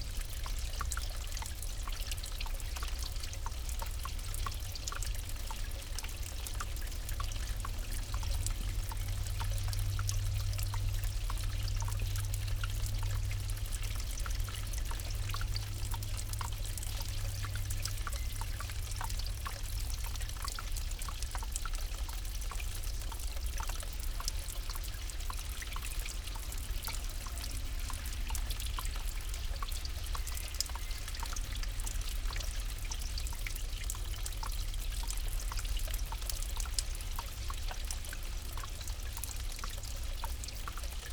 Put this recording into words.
In the immediate vicinity of the sanctuary, you will find a small watercourse